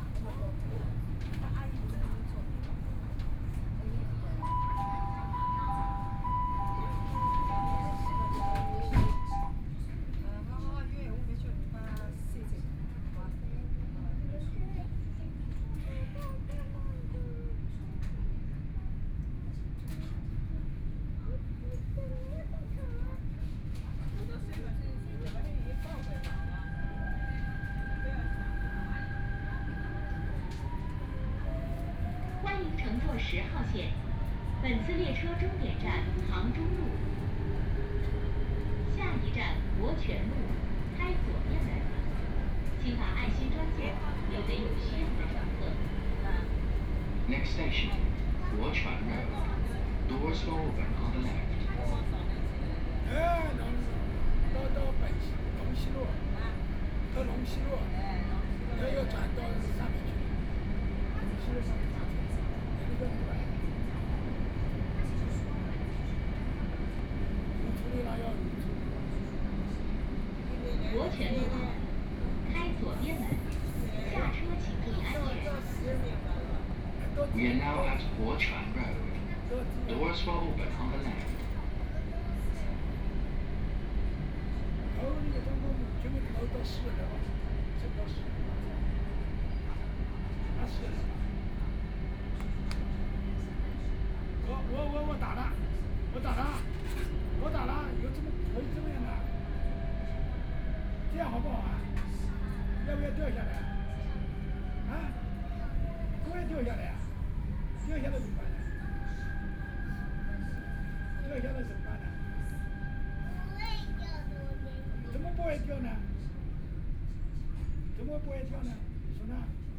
Yangpu District, Shanghai - The elderly and children
The elderly and children, from Wujiaochang station to Siping Road station, Binaural recording, Zoom H6+ Soundman OKM II
Yangpu, Shanghai, China, 25 November 2013